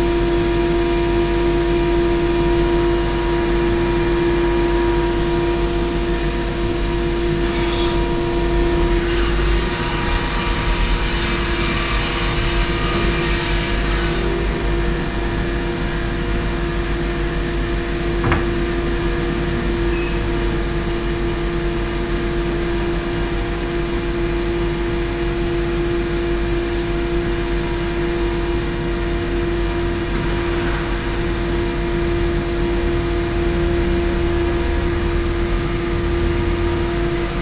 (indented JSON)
{
  "title": "cologne, landsbergstreet, wood carving",
  "date": "2011-11-17 13:29:00",
  "description": "At a local timber dealer. The sound of wood carving and wood transportation in the workshop patio.\nsoundmap nrw - social ambiences and topographic field recordings",
  "latitude": "50.93",
  "longitude": "6.96",
  "altitude": "52",
  "timezone": "Europe/Berlin"
}